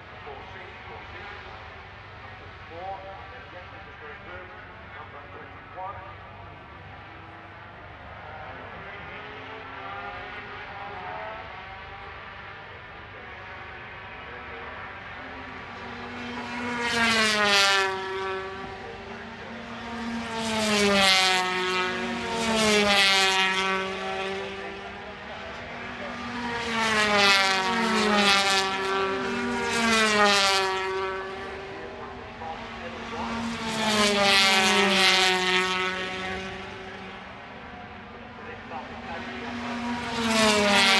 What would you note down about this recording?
British Motorcycle Grand Prix ... 125 race (contd) ... one point stereo mic to minidisk ...